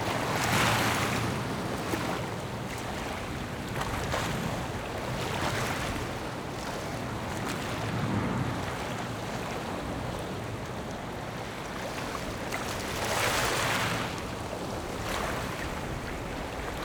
鎖港里, Magong City - In the small beach
Waves and tides, In the small beach
Zoom H6 + Rode NT4